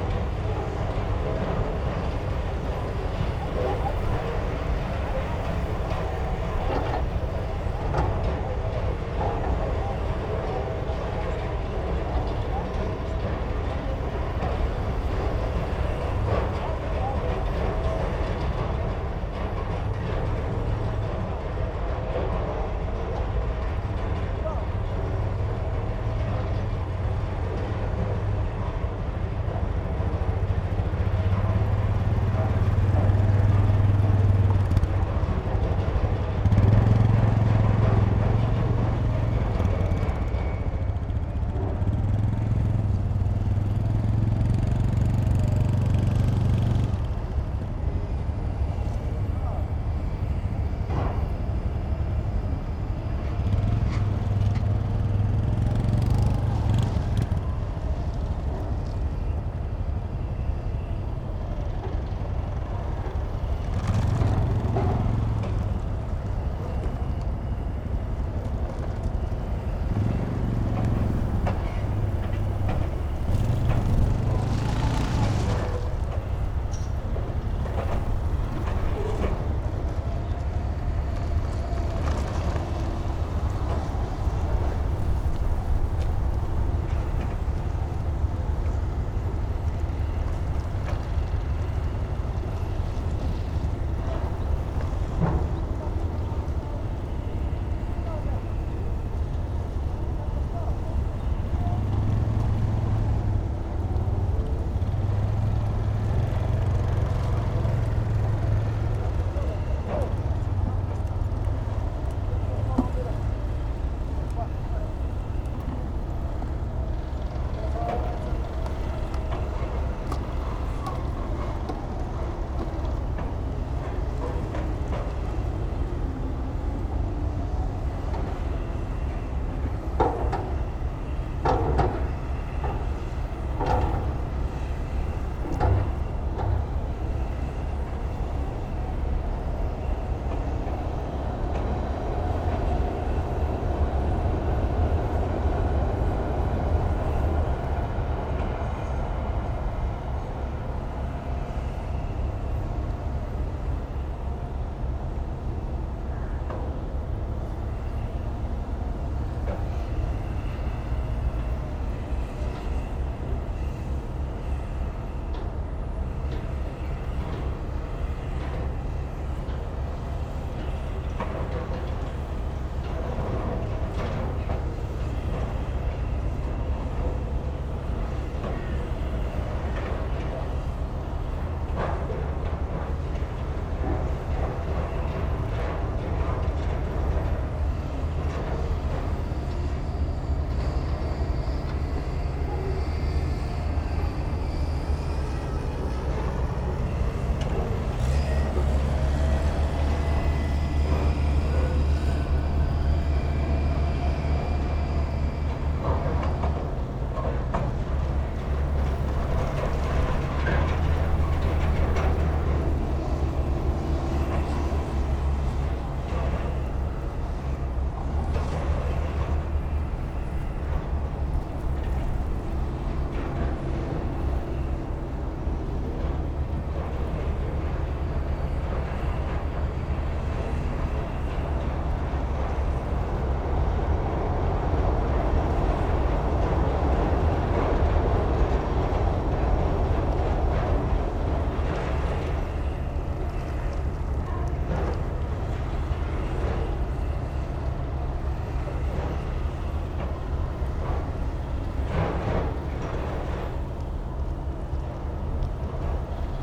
berlin, sonnenallee: aufgegebenes fimengelände - A100 - bauabschnitt 16 / federal motorway 100 - construction section 16: earthworks
different excavators, bulldozers and trucks during earthwork operations on the area of the former logistics company
april 16, 2015